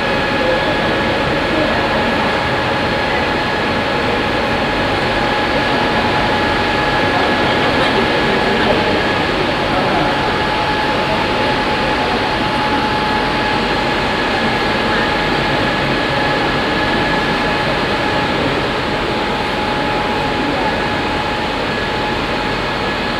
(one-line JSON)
{"title": "Neuss, Deutschland - museums island hombroich, langen founation, exhibtion hall", "date": "2014-08-09 14:00:00", "description": "Inside the Langen Foundation exhibition hall during the Otto Piene Exhibition \"Light and Air\" - here the ambience from the lower hall with the sound of the in and deflating air sculptures of Otto Piene.\nsoundmap d - social ambiences, topographic field recordings and art spaces", "latitude": "51.15", "longitude": "6.64", "altitude": "67", "timezone": "Europe/Berlin"}